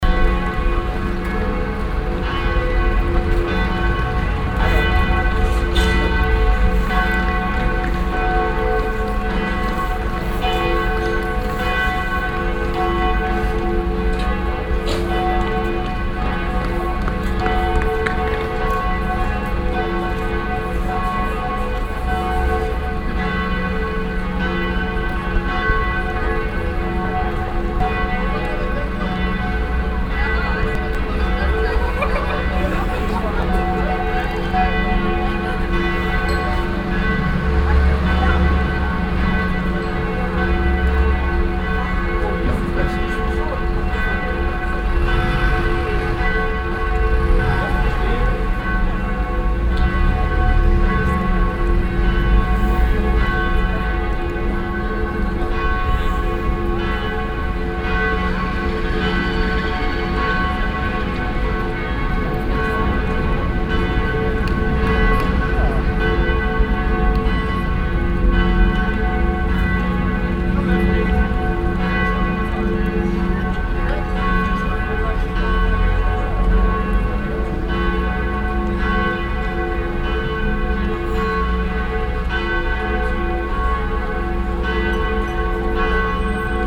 cologne, main station, vorplatz, mittagsglocken
soundmap nrw - social ambiences and topographic field recordings